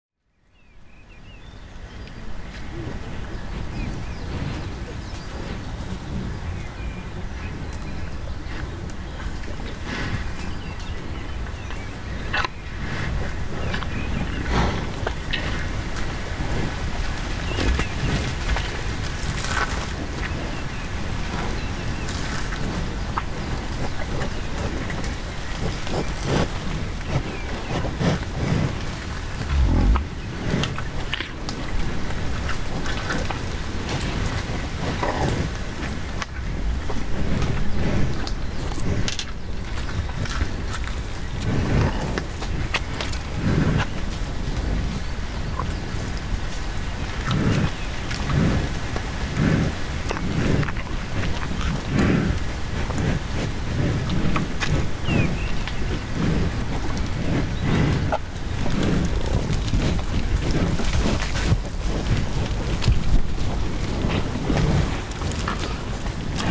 I am standing quite still against the fence while a number of Herefords come close enough to sniff my coat and chew the shotgun mic cover. Eventually they get bored and begin to wander away. Recorded with a Mix Pre 3, 2 Sennheiser MKH 8020s and a Rode NTG3.
England, United Kingdom, April 2019